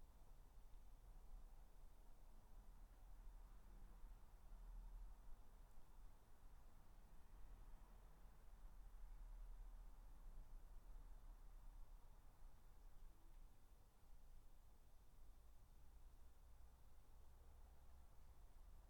Dorridge, West Midlands, UK - Garden 15
3 minute recording of my back garden recorded on a Yamaha Pocketrak
Solihull, UK, 13 August 2013, 17:00